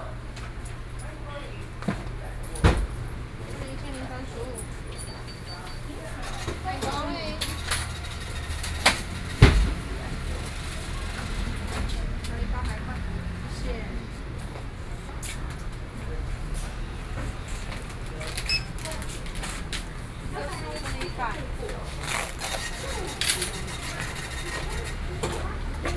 Ln., Zhulin Rd., Yonghe Dist., New Taipei City - Checkout
Checkout, Sony PCM D50 + Soundman OKM II
29 September, ~13:00